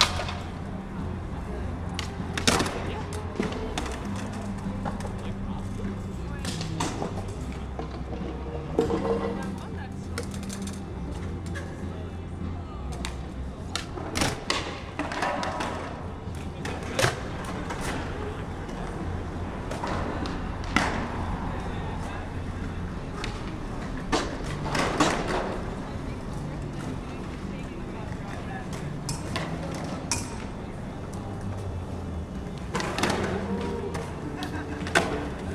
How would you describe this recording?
Recorded with Clippy EM272 on zoome F2